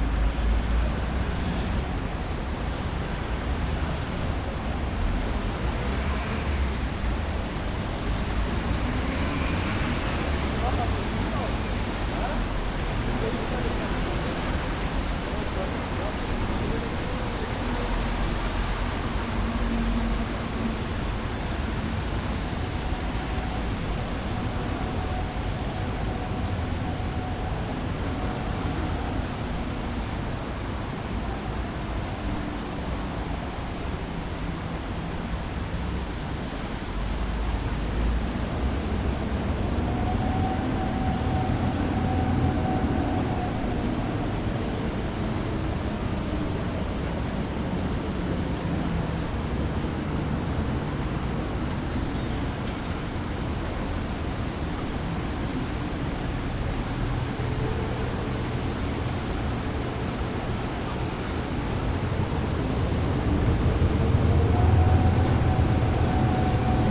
Escher Wyss, Zürich, Sound and the City - Sound and the City #07
Die Rangierlok eines schweren Güterzuges, bizarr in dieser Umgebung eines der verkehrsreichten Plätze in Zürich, überdeckt kurzzeitig den Automobilverkehr, potenziert noch durch die Fahrbahngeräusche, die sich von der darüberliegenden Hochstrasse vielfach an den Fassaden der umliegenden Gebäude brechen. Ein paar Schritte entfernt ein Trinkwasserbrunnen: nichts ist davon zu hören. Auch die Menschen, die sprechend vorbeigehen oder in den umliegenden Gartenrestaurants sitzen: sie bleiben stumm.
Art and the City: Los Carpinteros (Catedrales, 2012)
Zurich, Switzerland, 3 September 2012, 15:30